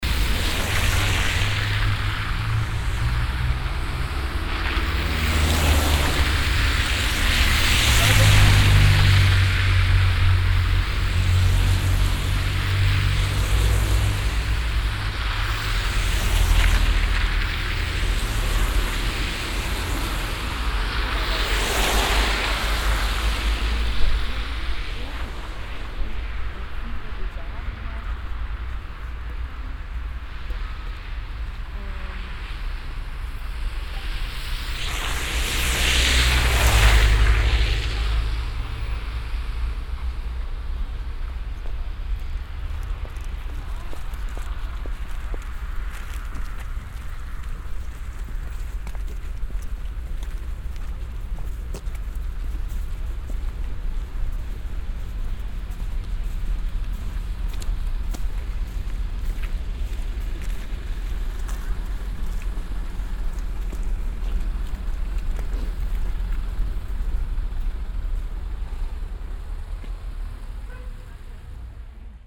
traffic sound in the winter on a wet street near a traffic light
soundmap d - social ambiences and topographic field recordings
Frankfurt, Germany, 18 June 2010, ~19:00